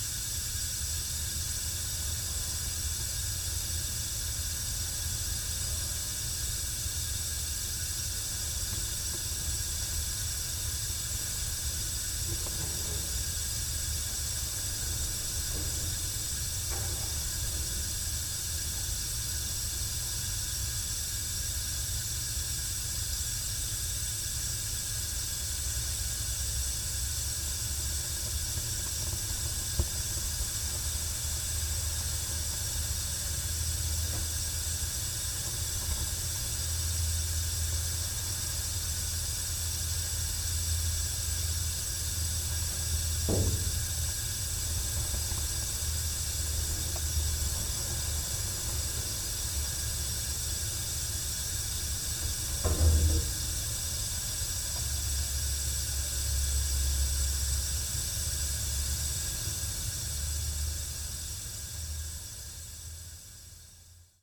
Cerro Sombrero, Región de Magallanes y de la Antártica Chilena, Chile - storm log - gas meter box
gas meter box, wind (outside) SW 19 km/h
Cerro Sombrero was founded in 1958 as a residential and services centre for the national Petroleum Company (ENAP) in Tierra del Fuego.